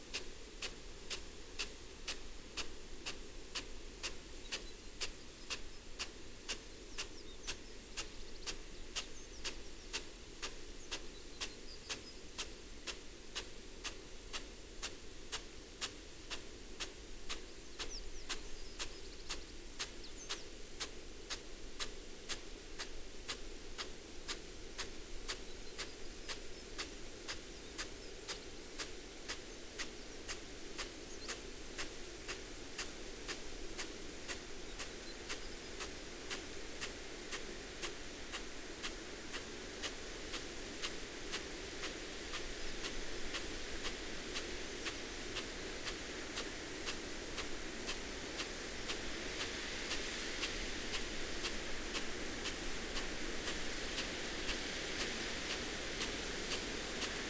Malton, UK - irrigation sprinkler ...
irrigation sprinkler on potato crop ... dpa 4060s in parabolic to mixpre3 ... bird calls ... song ... from ... wren ... yellowhammer ... blackbird ... linnet ... corn bunting ... tings and bangs from the big cylinder of rolled water pipe ... just fascinated by these machines and the effects they produce ...
North Yorkshire, England, United Kingdom, July 2022